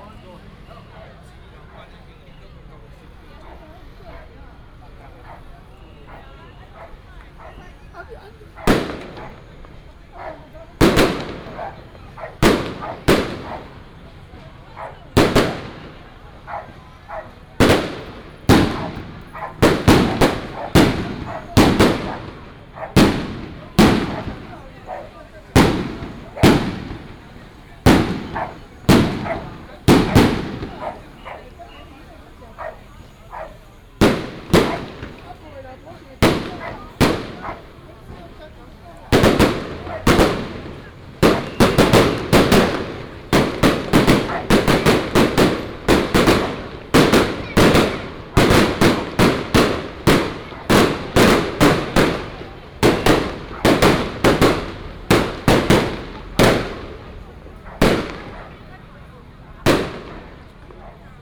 Shatian Rd., Shalu Dist., Taichung City - Walking on the road
Firecrackers and fireworks, Traffic sound, Baishatun Matsu Pilgrimage Procession